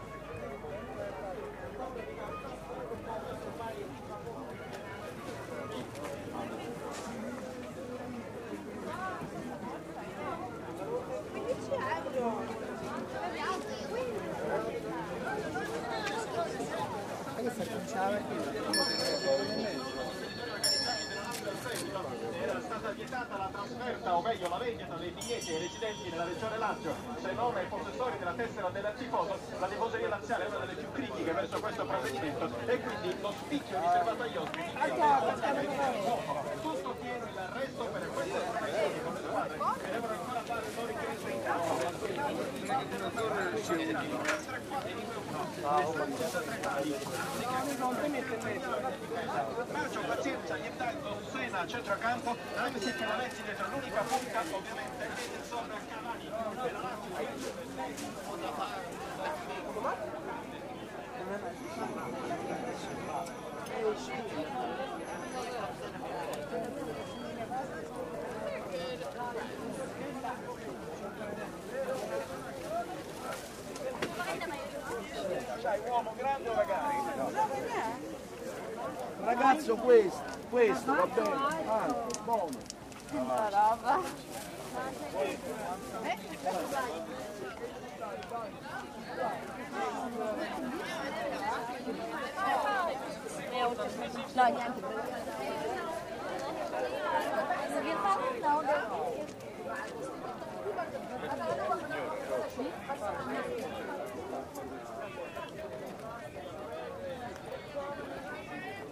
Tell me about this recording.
Sunday market at Porta Portese is basically divided into two sections that strech along two diverging streets. On the first, on Via Portuense, mainly new non-cotton clothes and other plastic products of ecologically doubtful origin are on offer. On the second, on Via degli Orti di Trastevere, there are wooden frames with and without faded photographs, rusty candelabres, and vestimentary leftovers of by-gone eras to be found. The recording was made on the first.